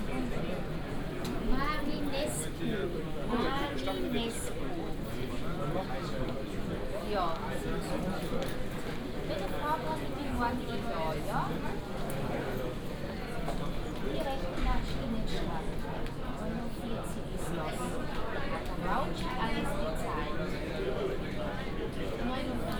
Vienna airport, arrival hall, ambience